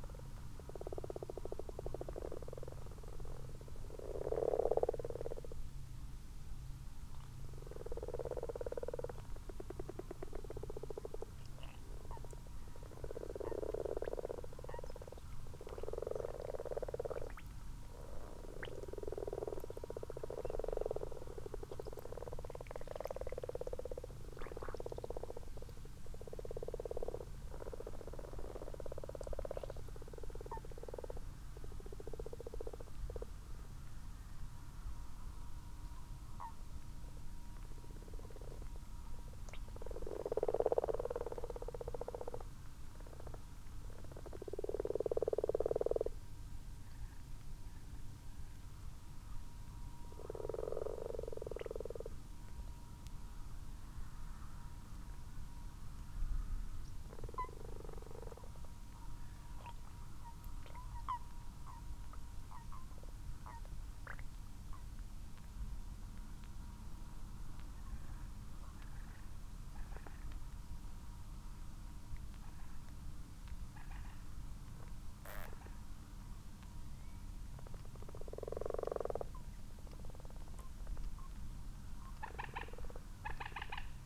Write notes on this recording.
common frogs and common toads in a garden pond ... xlr sass on tripod to zoom h5 ... time edited unattended extended recording ... bird calls ... pheasant at end of track ... the pond is now half full of frog spawn ...